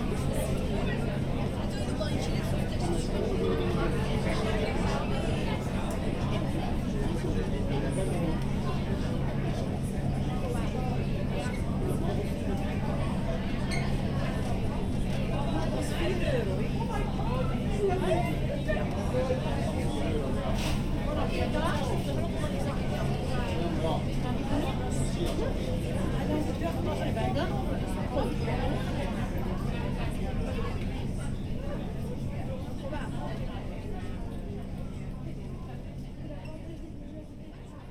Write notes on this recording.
Aboard the P&O ferry Pride of Canterbury, leaving Calais en route to Dover. Captain speaking and safety annoucements. Zoom H3-VR, ambisonic recording converted to binaural, use headphones